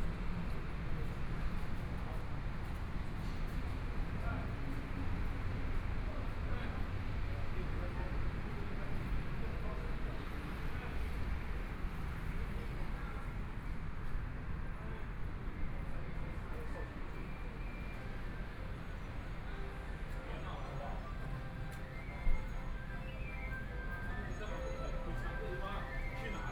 Zhongshan N. Rd., Taipei City - on the road
walking on the road, Environmental sounds, Traffic Sound, Motorcycle Sound, Pedestrians on the road, Binaural recordings, Zoom H4n+ Soundman OKM II
Taipei City, Taiwan, 8 February 2014